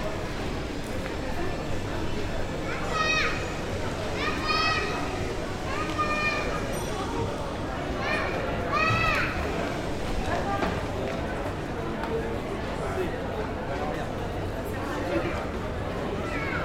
Gal Bordelaise, Bordeaux, France - Gal Bordelaise
Gal Bordelaise ambiance, atmosphere, street
Captation ZOOMH6